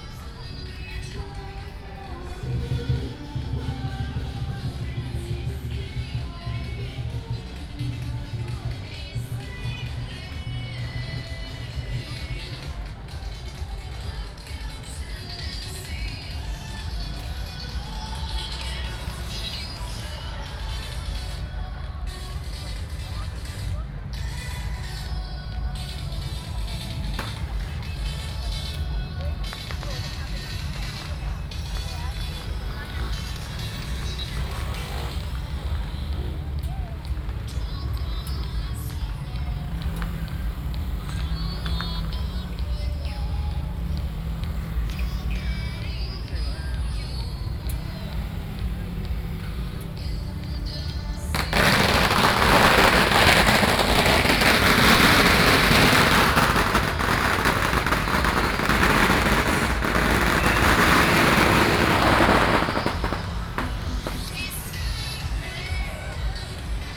Zhongshan N. Rd., Tamsui Dist. - Traditional temple festivals
Traditional temple festivals, Firecrackers
New Taipei City, Taiwan, 21 June